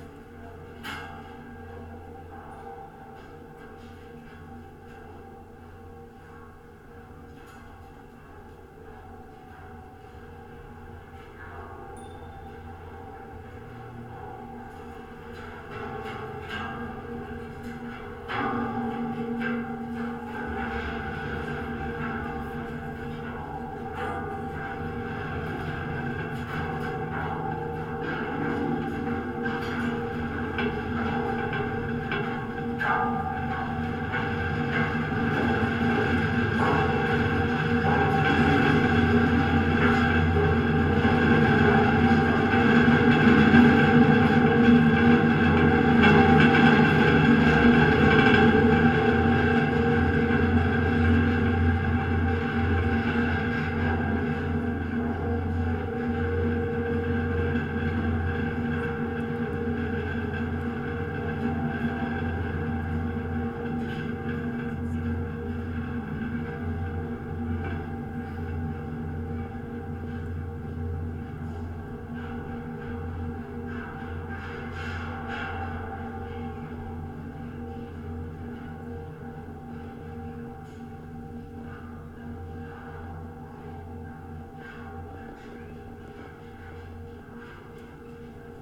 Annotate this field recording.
amazing tonal sounds from a pedestrian suspension bridge on Princes Island Calgary Canada